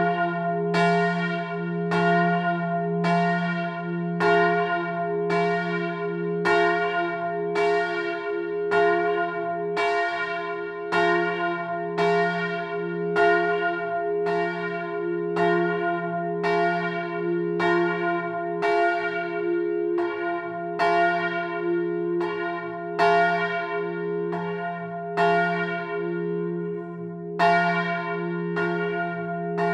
Rue de l'Église, Longny les Villages, France - Longny-au-Perche au Perche - Église St-Martin
Longny-au-Perche au Perche (Orne)
Église St-Martin
volée cloche 1 (haut)
France métropolitaine, France, 20 February 2020